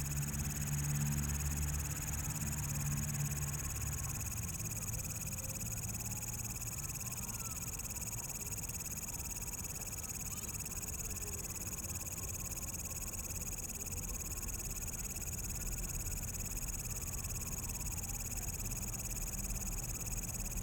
Taipei EXPO Park, Taiwan - Night in the park
Night in the park, Insects, Traffic Sound, People walking in the park
Please turn up the volume a little
Zoom H6, M/S
17 February 2014, 20:13